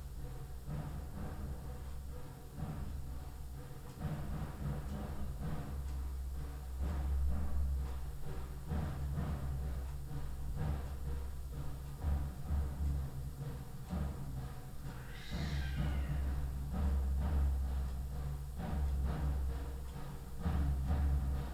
Istanbul, Tarlabasi. - Ramadan wake-up drum-call to eat at 3.35h in the morning